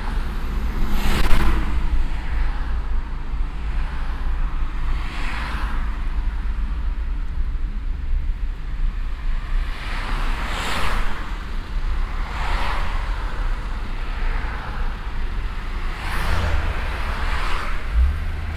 cologne, innere kanalstrasse, stadtauswärts im verkehr
innere kanalstrasse stadtauswärts - nach köln nord - verkehr unter zwei unterführungen- nachmittags - auf innerer kanal strasse - parallel stadtauswärts fahrende fahrzeuge - streckenaufnahme teil 04
soundmap nrw: social ambiences/ listen to the people - in & outdoor nearfield recordings